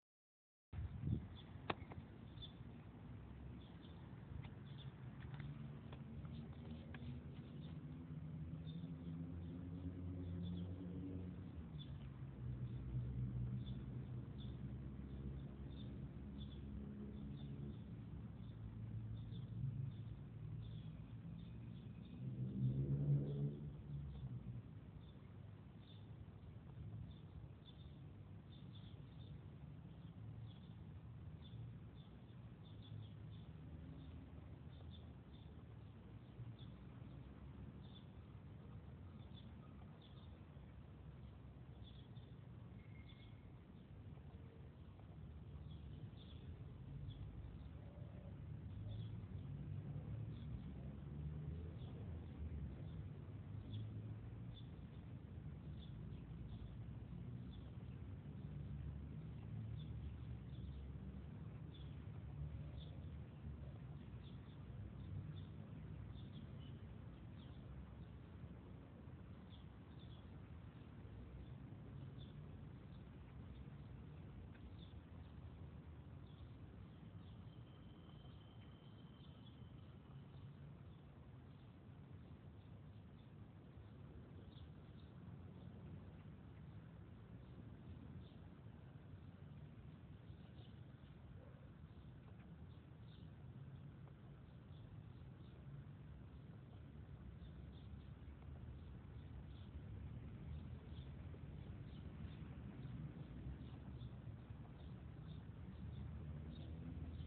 Godoy Cruz, Mendoza, Argentina - Barrio y pajaritos.
Entre arboles, pajaros y animalias se funden en el fondo con el ruido de la ciudad.
7 July 2014